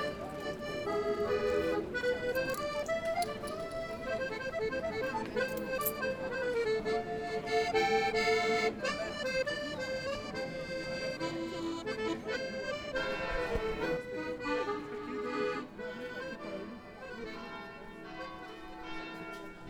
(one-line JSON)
{"title": "Cannaregio, Venice, Itálie - Campo San Marcuola", "date": "2016-03-22 17:08:00", "description": "Liturgy in the San Marcuola on Easter and accordeonist in front of the Church", "latitude": "45.44", "longitude": "12.33", "altitude": "3", "timezone": "Europe/Rome"}